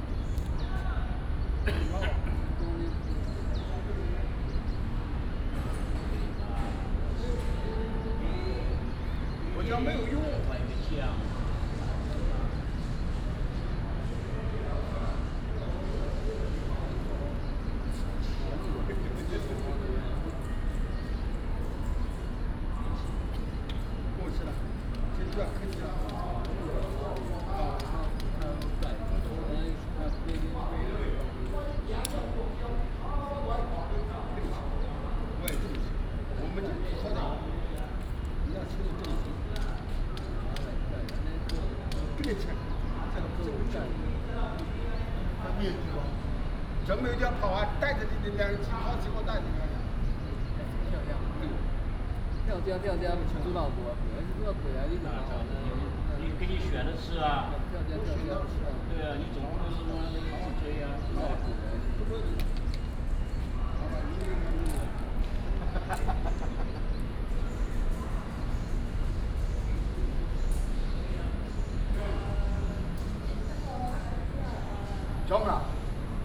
National Dr. Sun Yat-sen Memorial Hall, Taipei City - Play chess
Play chess, A group of men playing chess, Hot weather